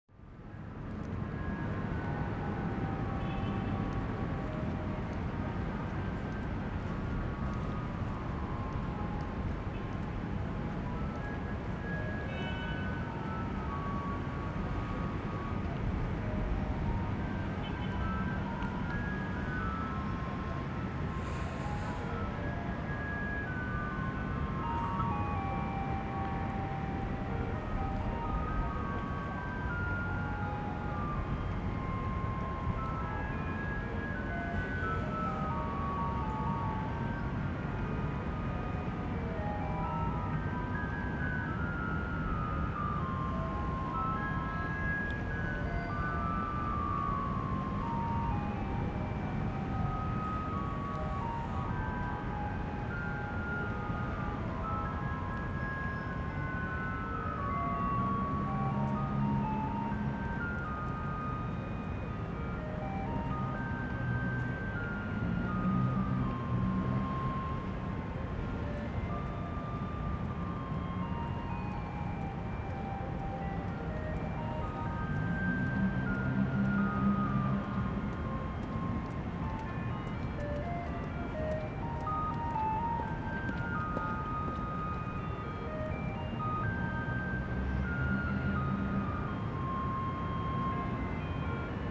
四號公園, Zhonghe Dist., New Taipei City - garbage truck on arrival
garbage truck on arrival, in the Park, Sony ECM-MS907+Sony Hi-MD MZ-RH1